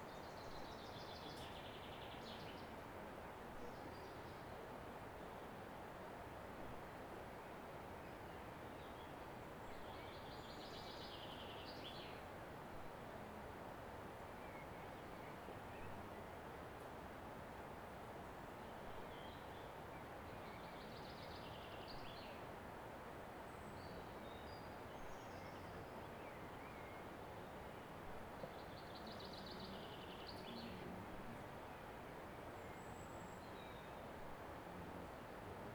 {
  "title": "Les Grisières - Birds and Storm",
  "date": "2012-05-27 20:10:00",
  "description": "Birds and storm, at the end of the day.",
  "latitude": "44.70",
  "longitude": "4.19",
  "altitude": "604",
  "timezone": "Europe/Paris"
}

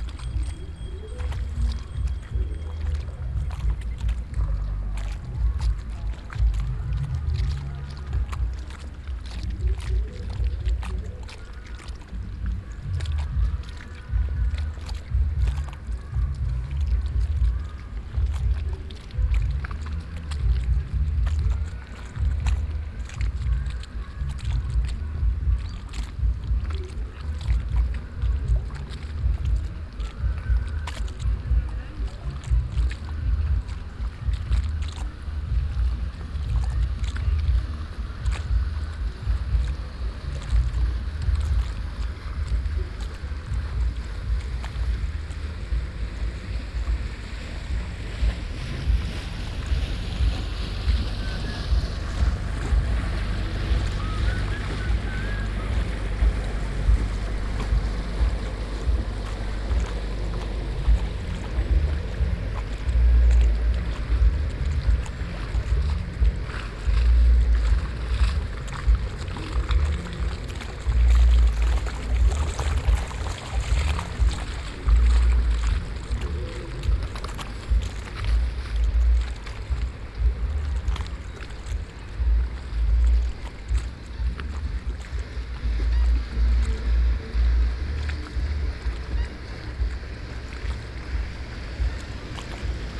{
  "title": "bootjes op de Rijn, water, muziek",
  "date": "2011-09-03 17:15:00",
  "description": "langsvarende bootjes\nboats on the river, low bass music traveling over the water",
  "latitude": "52.15",
  "longitude": "4.46",
  "timezone": "Europe/Amsterdam"
}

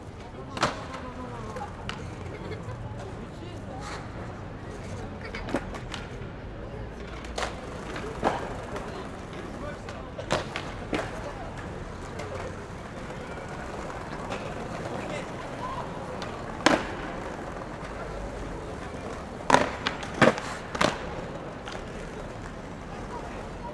{
  "title": "Toulouse, France - skateboarding is not a crime",
  "date": "2022-01-29 14:26:00",
  "description": "Skateboarding\ncaptation ; ZOOMH6",
  "latitude": "43.60",
  "longitude": "1.44",
  "altitude": "157",
  "timezone": "Europe/Paris"
}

